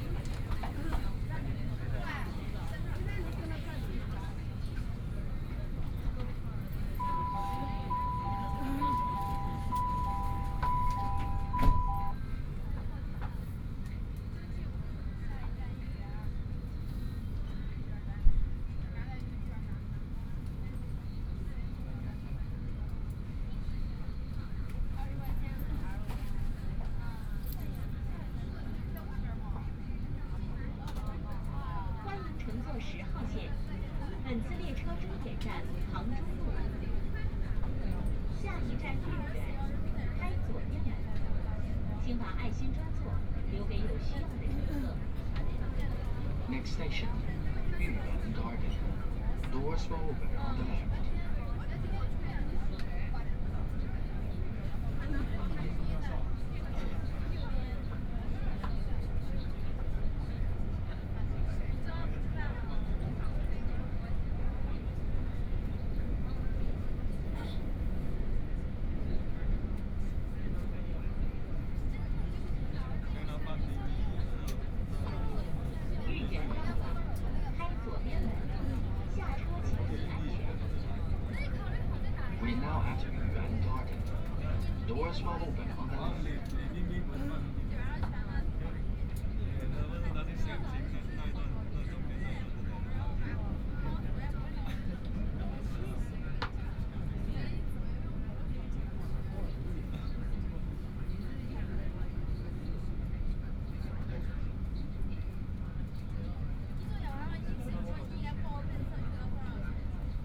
Zhabei District, Shanghai - Line 10 (Shanghai Metro)
from North Sichuan Road station to Yuyuan Garden station, Binaural recording, Zoom H6+ Soundman OKM II